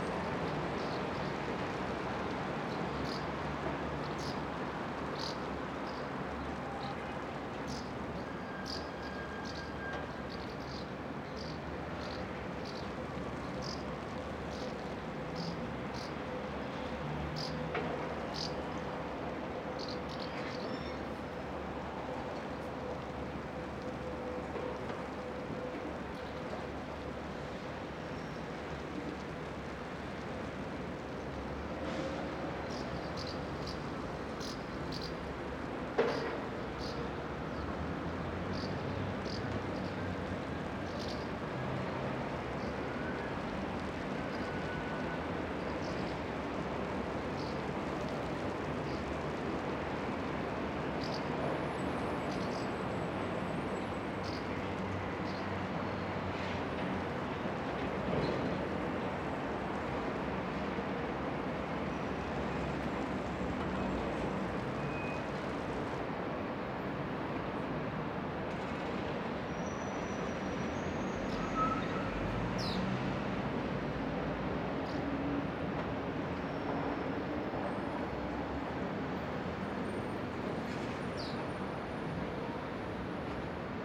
Berlin, Leibzigerstrasse, Deutschland - Stadtambi, Morgens
Auf dem Balkon. 23. Stockwerk.